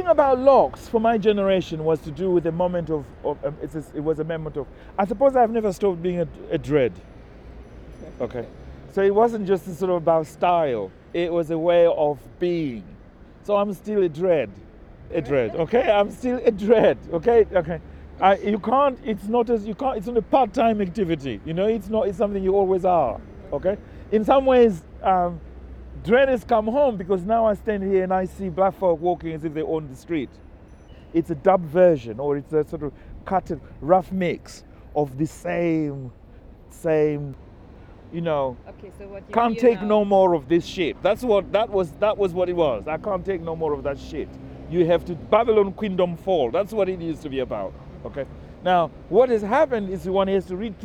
We are walking down Coldharbour Lane and across Brixton Market with the writer George Shire. He takes photographs. I fixed a bin-aural mic on his shirt… capturing his descriptions, memories and thoughts… an audio-walk through Brixton and its histories, the up-rise of black culture in the UK…
the recording is part of the NO-GO-Zones audio radio project and its collection:
13 March 2008, ~11:00